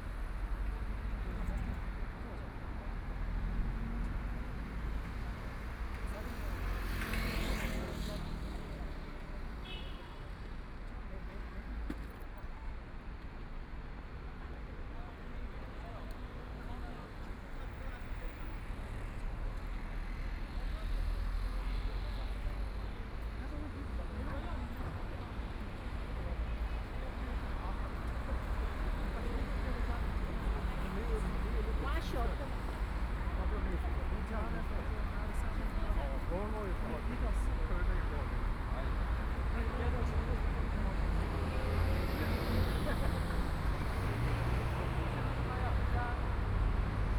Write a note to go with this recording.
walking in the street, Binaural recording, Zoom H6+ Soundman OKM II